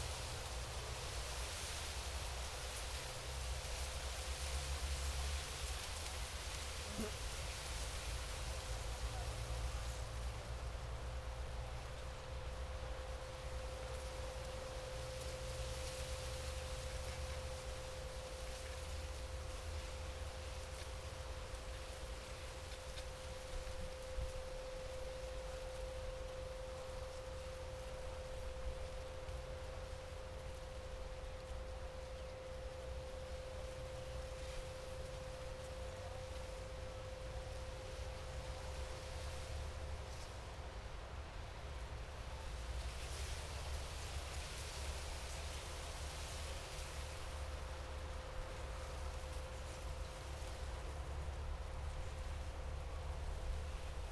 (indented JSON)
{
  "title": "Srem, Municipal Ecologic Park of Wlodzimierz Puchalski, swamps - wind in rushes",
  "date": "2012-08-12 11:15:00",
  "description": "wind moving tall rushes",
  "latitude": "52.09",
  "longitude": "17.01",
  "altitude": "71",
  "timezone": "Europe/Warsaw"
}